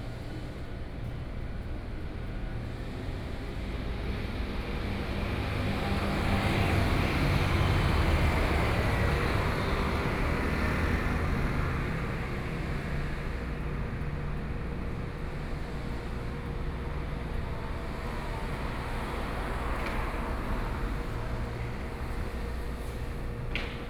Taoyuan - Traffic noise
In front of a convenience store, Sony PCM D50 + Soundman OKM II
Taoyuan County, Taiwan